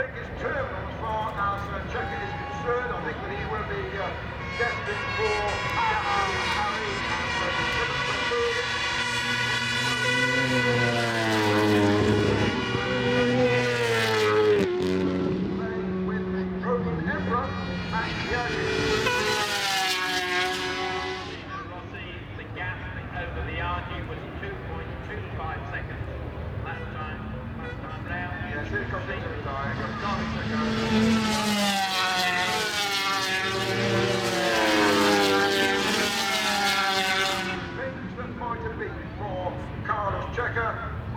500 cc motorcycle race ... part two ... Starkeys ... Donington Park ... the race and associated noise ... Sony ECM 959 one point stereo mic to Sony Minidisk ...